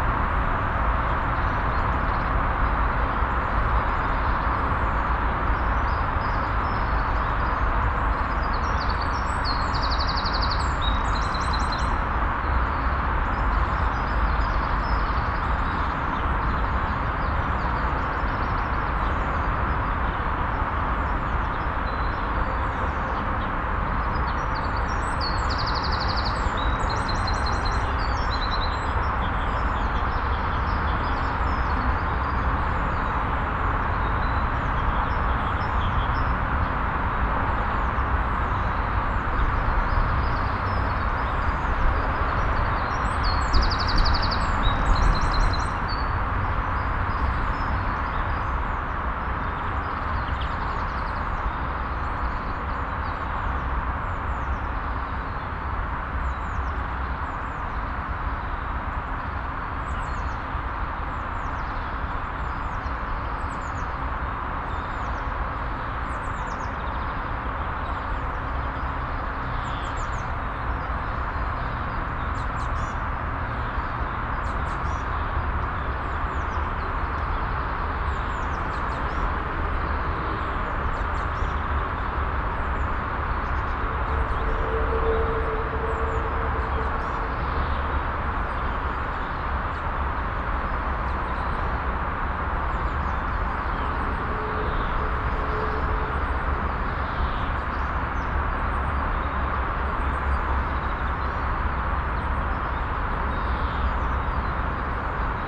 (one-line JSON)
{"title": "langenfeld, gladbacher hof, autobahnidylle", "description": "das rauschen der naheliegenden autobahn\nein pferd auf der kleinen umzäunten bauernhofkoppel, vögel im bebüsch, mittags\nsoundmap nrw/ sound in public spaces - social ambiences - in & outdoor nearfield recordings", "latitude": "51.11", "longitude": "6.98", "altitude": "73", "timezone": "GMT+1"}